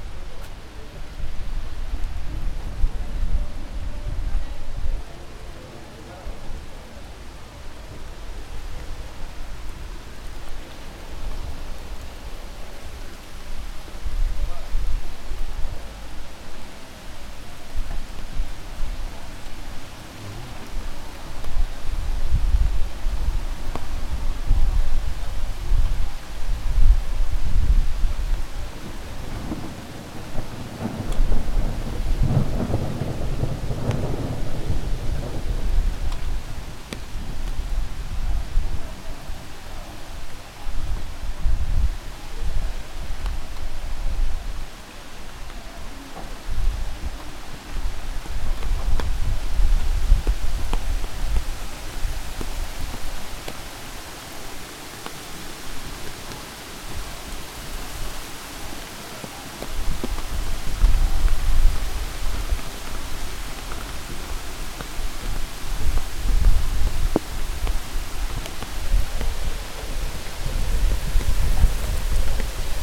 The first spring Dejvice storm
The spring storm in Dejvice, recorded in the Cafe Kabinet.
Kabinet is beautiful cafe in quit place in the heart of Dejvice. It is even calmer thanks to construction works in the street. So cars can drive through from one side. During the conversation about events in Institut of Intermedia in ČVUT, which is pretty close from there, the storm came very quickly with strong rain.
26 May 2011, ~09:00